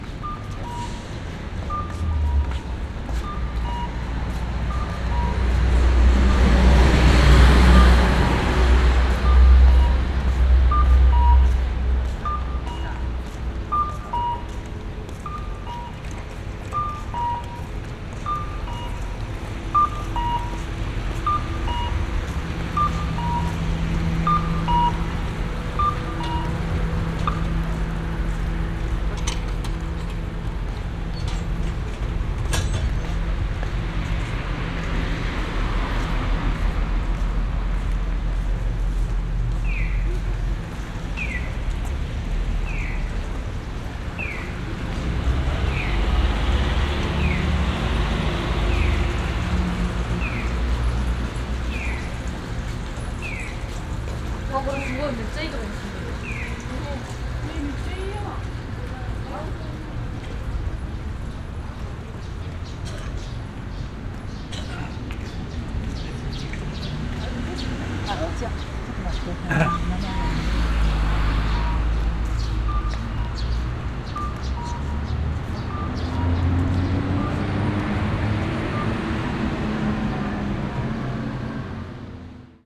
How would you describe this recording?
two traffic signs giving acoustic signals in matsumoto city - traffic and passenger passing by, international city scapes - social ambiences and topographic field recordings